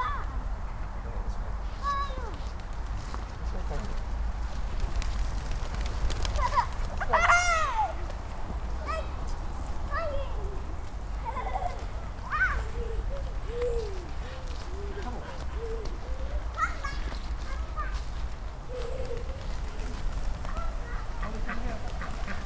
Hartley Wintney, Hampshire, UK - Doves fluttering
This recording features a little girl in pink wellies terrorising the doves, followed by me slowly entering into their midst (it was a really big flock) to listen to the beautiful flutterings of their wings. We were right beside a big duck pond and for some reason they suddenly all got spooked, and - as one - lifted off the ground in a huge, wing-beating cloud of birdiness. That is what you can hear in the recording.
21 October 2010, 15:00